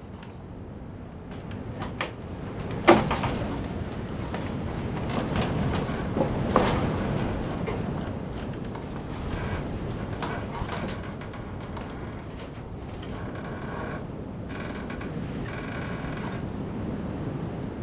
Fläsch, Schweiz - Wind in einem Holzschopf
WIND, KNARREN, AUTOPASSAGE
NOVEMBER 1998